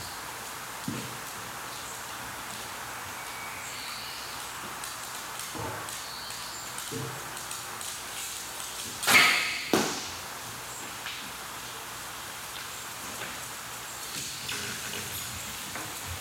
Matobamachi, Yahatanishi Ward, Kitakyushu, Fukuoka, Japan - Kyūdō Practice
Sony WM-D6C / Sony XII 46 / Roland CS-10EM
福岡県, 日本